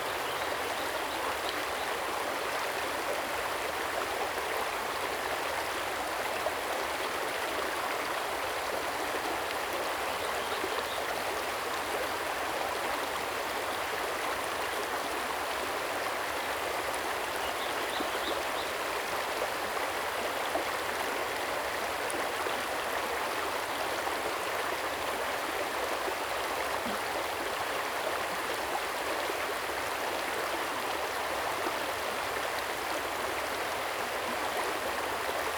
Streams and birdsong, The sound of water streams
Zoom H2n MS+XY